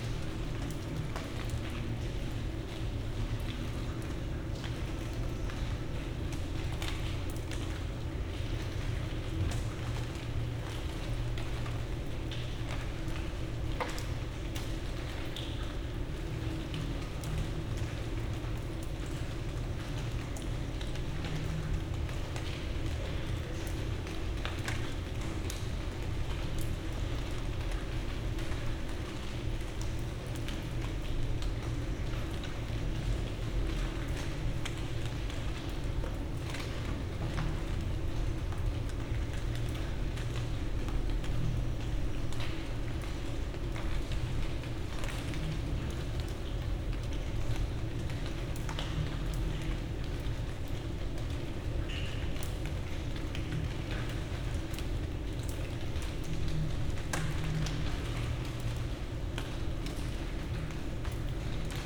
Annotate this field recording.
stoned tourist party people, neighbour complains about noise, raindrops hitting leaves, strange unidentified "machine" noise, the city, the country & me: july 19, 2012, 99 facets of rain